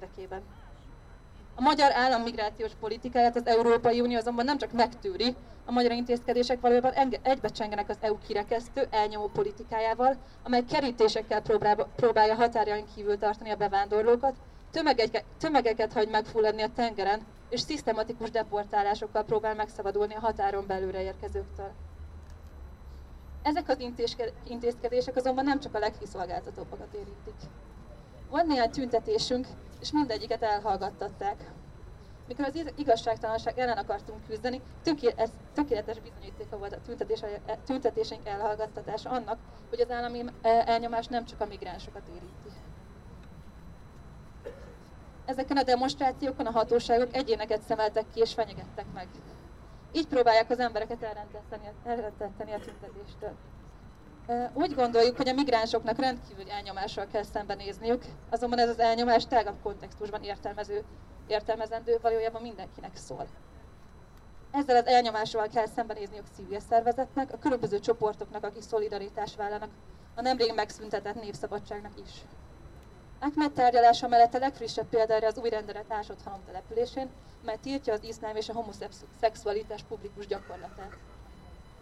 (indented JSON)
{
  "title": "Demonstration for Ahmed, Budapest - Demonstration Speeches for Ahmed",
  "date": "2016-12-03 16:25:00",
  "description": "There are three contributions on Hungarian and English: by the Migrant Solidarity Group of Hungary, by Amnesty International and by Arpad Shilling, a director from Budapest.",
  "latitude": "47.50",
  "longitude": "19.07",
  "altitude": "109",
  "timezone": "GMT+1"
}